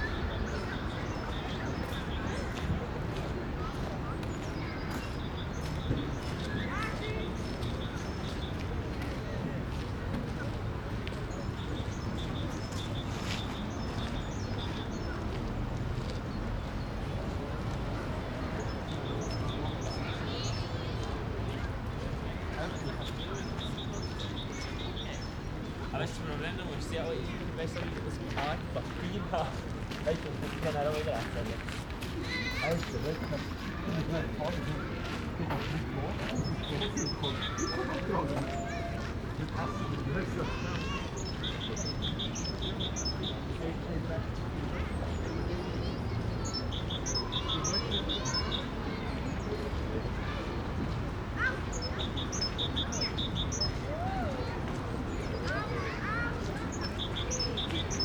Rosengarten, Schosshalde, Bern, Schweiz - Rosengarten Park
Sunny winter day. Lot of people out in the parc, children playing to the left. Street traffic in the back.
Microphones: MKH50/MKH30 in MS-stereo configuration in Rode Blimp
Recorder: zoom F8
Bern, Switzerland, 1 February 2017